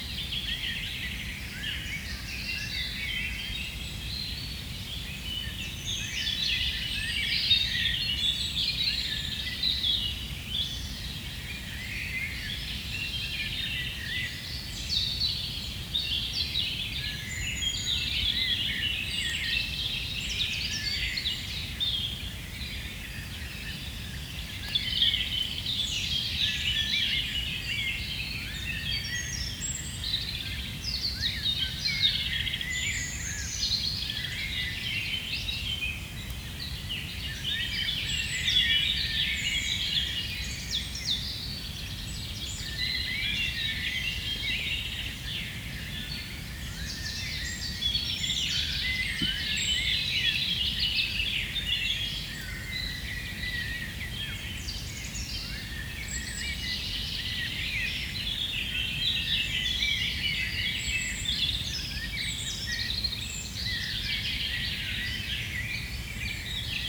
Limbourg, Belgium - Forest waking up
Forest quietly waking up, very early on the morning. Ambiance is not noisy, it's appeased. Robin singing on a nearby tree, and distant blackbirds.